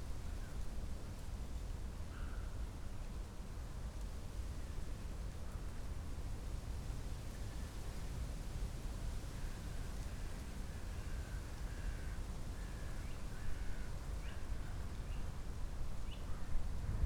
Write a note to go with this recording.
the rusty ferris wheel starts moving and sqeaking in the wind, sounds from the loading of ships at the nearby power station, (SD702, DPA4060)